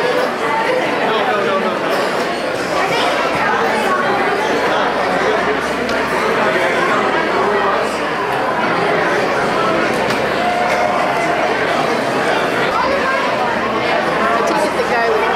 Edmonds-Woodway High School - Auditorium #2
A huge high school gymnasium filled with students and parents for a special presentation. I wish I'd started the tape several minutes earlier, but then again, this isn't a soundscape you can really sit and listen to.